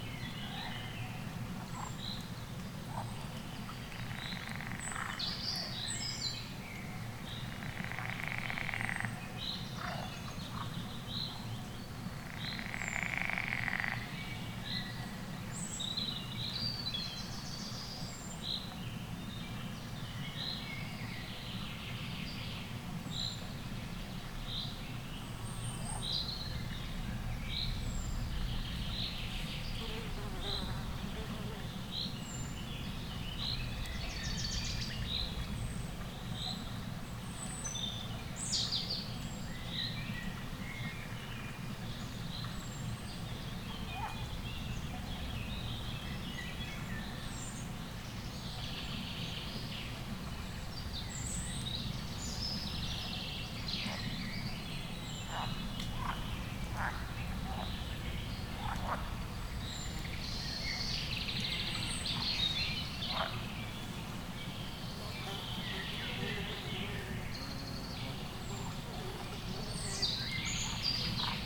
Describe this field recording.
pond, late afternoon, frogs and bumblebees